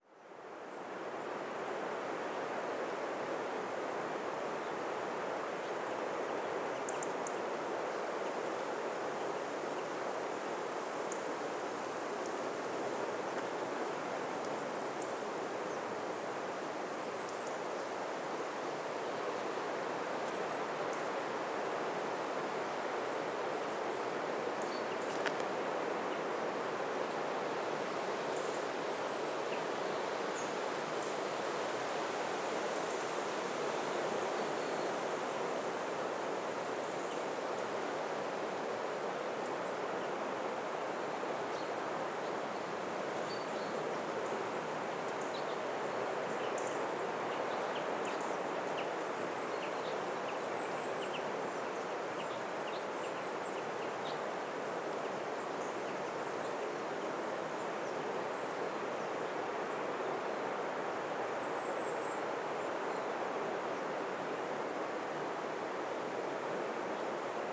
{"title": "Gotska Sandön, Sweden - Forest by the light house in Gotska Sandön", "date": "2016-10-20 10:00:00", "description": "A set of recordings made in one autumn morning during a work stay in the northwest coast of the uninhabited island of Gotska Sandön, to the east of Gotland, Sweden. Recorded with a Sanken CSS-5, Sound devices 442 + Zoom H4n.\nMost of the tracks are raw with slight level and EQ corrective adjustments, while a few others have extra little processing.", "latitude": "58.39", "longitude": "19.19", "altitude": "19", "timezone": "Europe/Stockholm"}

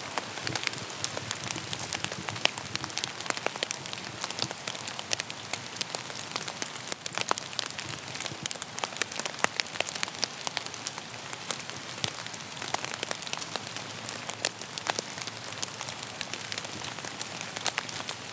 {"title": "Heist-op-den-Berg, Belgium - hailsnow", "date": "2017-01-04 10:06:00", "description": "recording of rain on leafes covered with ice\nzoom H4 recorded by Pieter Thys", "latitude": "51.05", "longitude": "4.68", "altitude": "23", "timezone": "Europe/Brussels"}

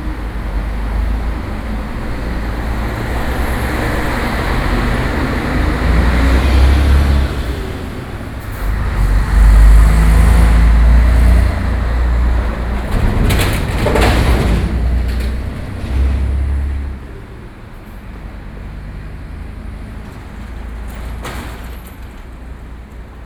{"title": "Limen St., Xizhi Dist., New Taipei City - Traffic noise", "date": "2012-11-04 07:26:00", "latitude": "25.07", "longitude": "121.66", "altitude": "15", "timezone": "Asia/Taipei"}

